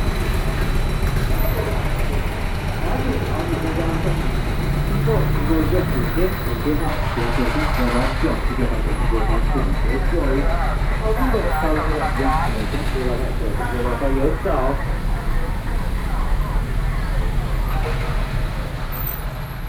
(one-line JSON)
{"title": "Zhongshan District, Taipei City - soundwalk", "date": "2012-11-09 13:38:00", "latitude": "25.05", "longitude": "121.54", "altitude": "11", "timezone": "Asia/Taipei"}